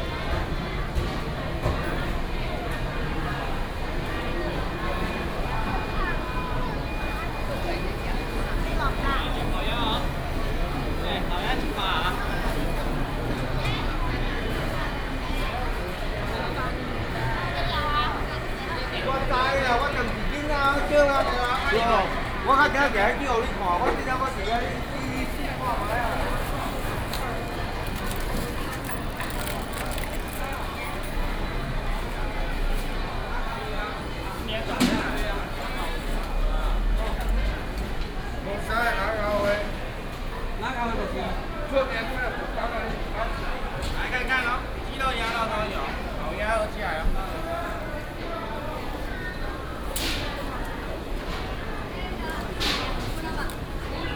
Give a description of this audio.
Walking at Traditional market, Traffic sound, Traditional market, Binaural recordings, Sony PCM D100+ Soundman OKM II